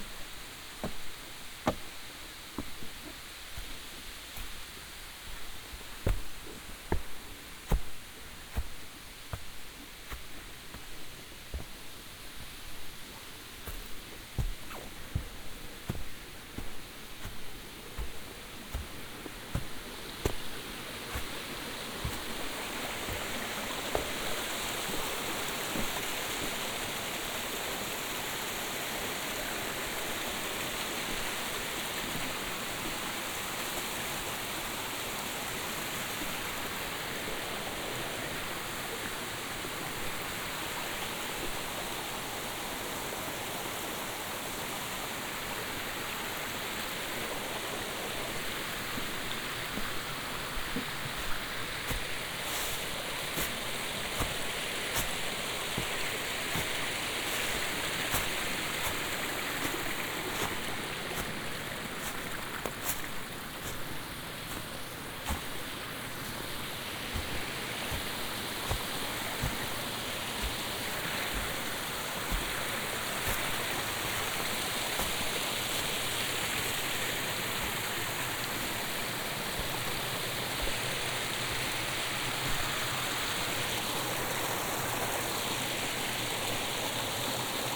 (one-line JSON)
{"title": "pohorje waterfall from above - walk along waterfall", "date": "2011-11-21 13:10:00", "description": "walk upwards (binaural) around the waterfall", "latitude": "46.50", "longitude": "15.56", "altitude": "1039", "timezone": "Europe/Ljubljana"}